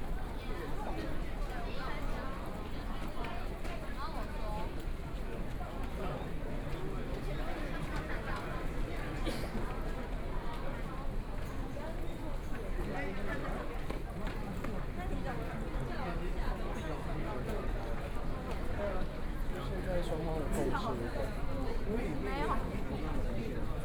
{"title": "Taipei main Station, Taiwan - Walking in the station", "date": "2014-03-21 22:03:00", "description": "Walking into the station\nBinaural recordings", "latitude": "25.05", "longitude": "121.52", "altitude": "25", "timezone": "Asia/Taipei"}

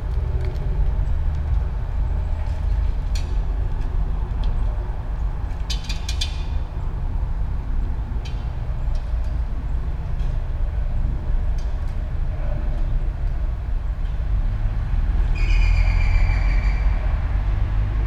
{"title": "all the mornings of the ... - sept 3 2013 tuesday 07:02", "date": "2013-09-03 07:02:00", "latitude": "46.56", "longitude": "15.65", "altitude": "285", "timezone": "Europe/Ljubljana"}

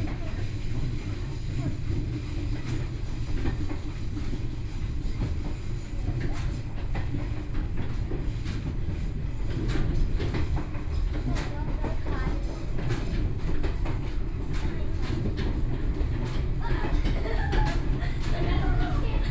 {"title": "Bade City, Taoyuan County - Tze-Chiang Train", "date": "2013-08-12 15:33:00", "description": "inside the Tze-Chiang Train, from Zhongli station to Taoyuan station, Zoom H4n + Soundman OKM II", "latitude": "24.98", "longitude": "121.28", "altitude": "109", "timezone": "Asia/Taipei"}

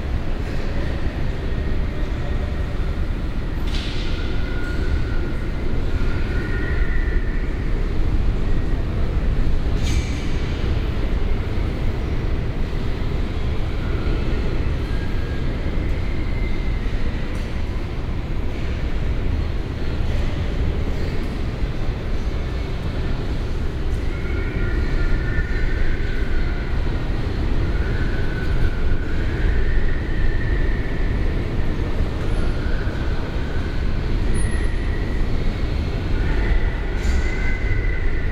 {"title": "Fuerte Ventura, hotel, windy morning in the hall", "latitude": "28.16", "longitude": "-14.23", "altitude": "17", "timezone": "Europe/Berlin"}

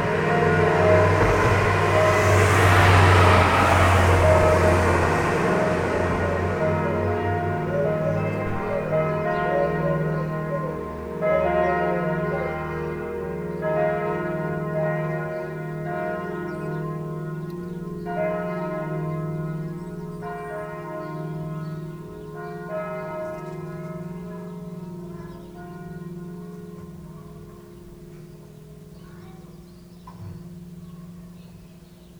{"title": "tondatei.de: oberbergen, kaiserstuhl, kirchengeläut - oberbergen, kaiserstuhl, kirchengeläut", "date": "2010-04-05 09:48:00", "latitude": "48.10", "longitude": "7.66", "altitude": "245", "timezone": "Europe/Berlin"}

